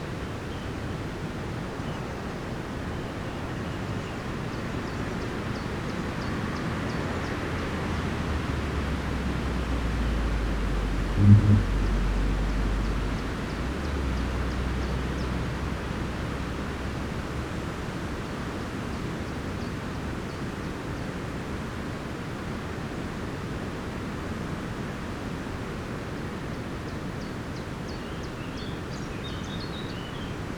warns, skarl: small forest - the city, the country & me: trees swaying in the wind
stormy day (force 7), trees swaying in the wind, cars driving over cattle grid
the city, the country & me: june 24, 2013
24 June, 17:11